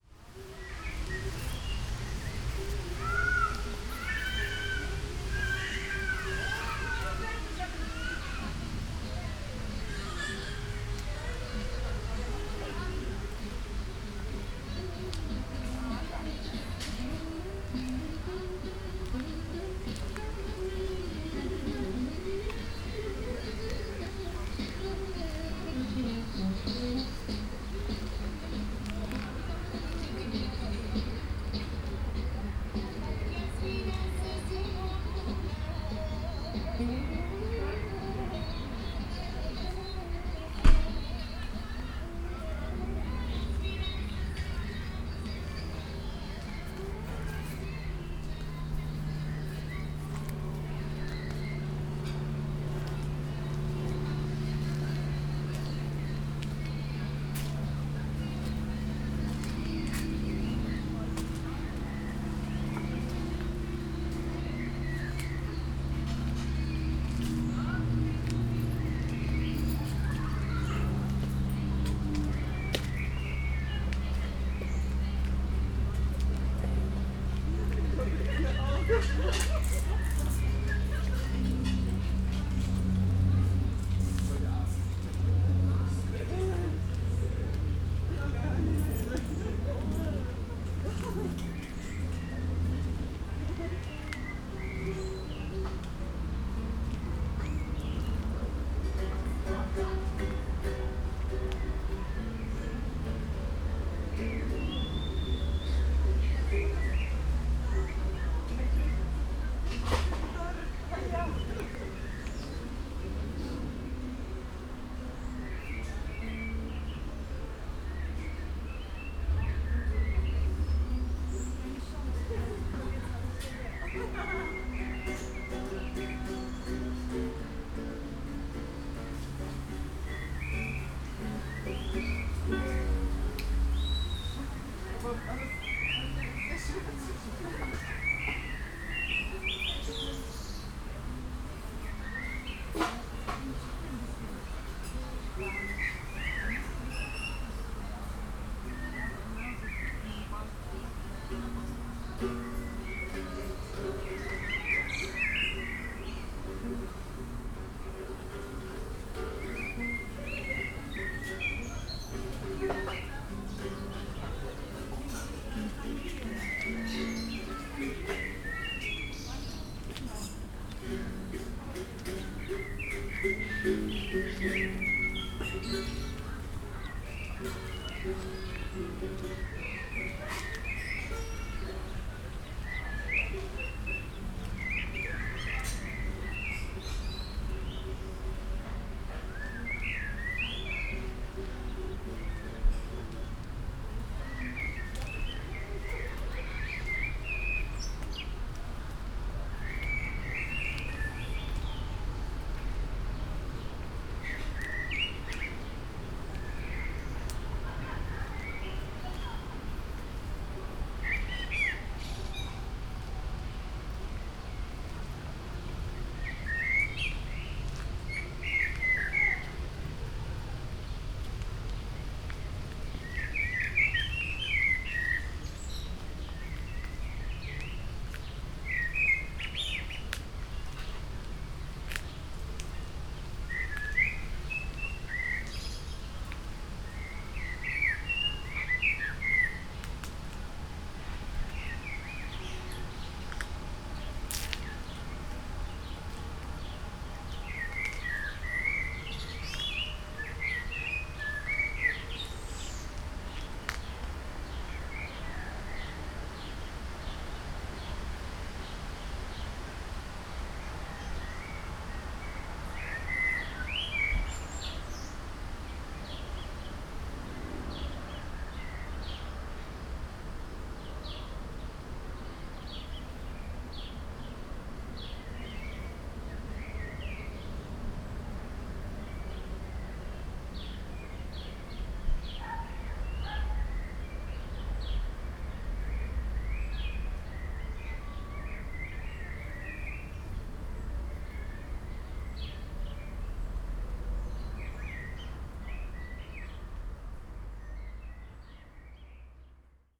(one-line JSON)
{"title": "Schwarzer Kanal, Neukölln, Berlin - walk in garden plot, ambience", "date": "2013-07-07 18:05:00", "description": "short walk in a small patch of woods, along Schwarzer Kanal, a queer community Wagenplatz. ambience, birds, music, kids\n(Sony PCM D50, DPA4060)", "latitude": "52.48", "longitude": "13.46", "altitude": "38", "timezone": "Europe/Berlin"}